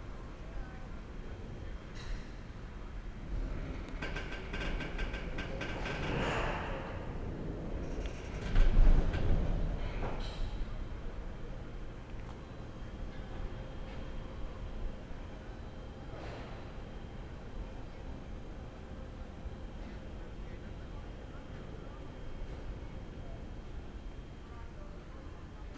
The recording of the ambient with machine sounds at the turntable. Near the Bohdalec and the railway crossing Depo Vršovice.
Česko, European Union